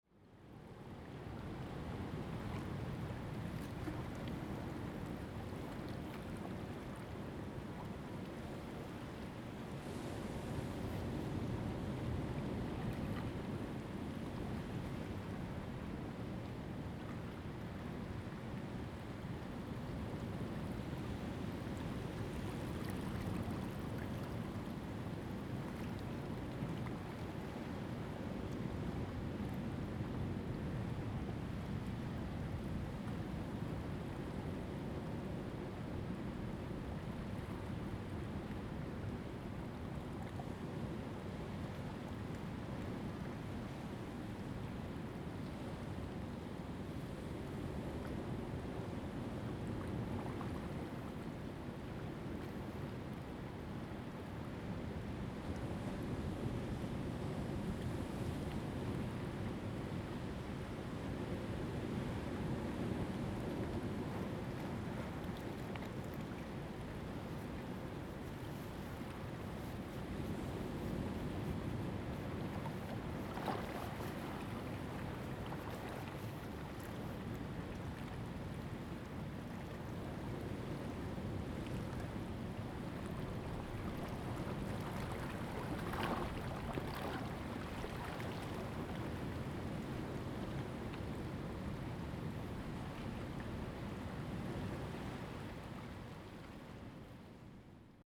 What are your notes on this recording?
On the coast, Waves and tides, Zoom H2n MS +XY